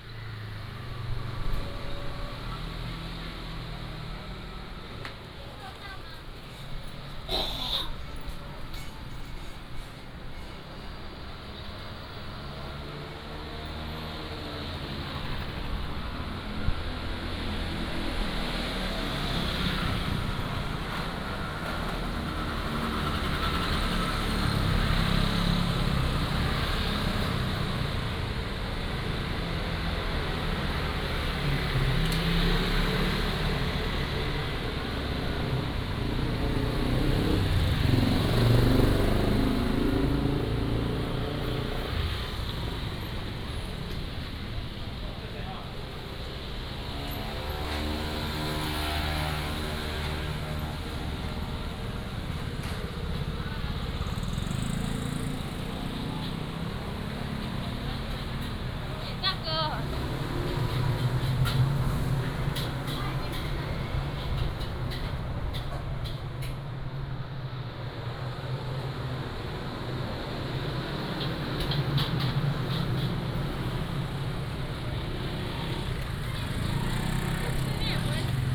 Zhongxing Rd., Jincheng Township - Walking in the Street
Walking in the Street, Traffic Sound